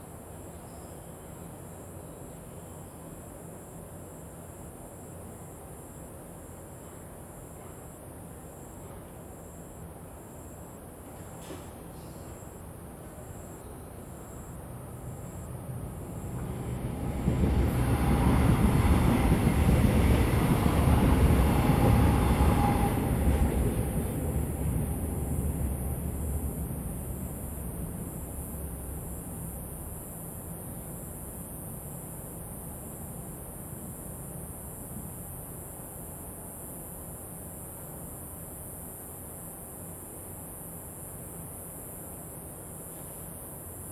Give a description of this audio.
under the railroad tracks, Next to a pig farm, Traffic Sound, Train traveling through, Zoom H2n MS +XY